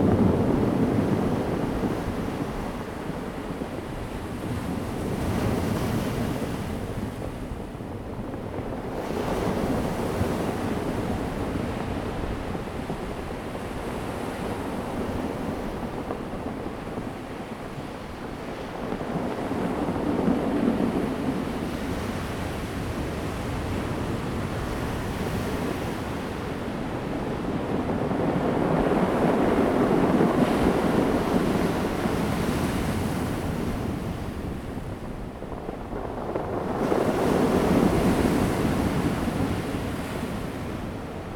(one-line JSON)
{"title": "南田村, Daren Township - Wave impact produces rolling stones", "date": "2018-03-23 11:00:00", "description": "Sound of the waves, wind, Wave impact produces rolling stones\nZoom H2n MS+XY", "latitude": "22.26", "longitude": "120.89", "altitude": "10", "timezone": "Asia/Taipei"}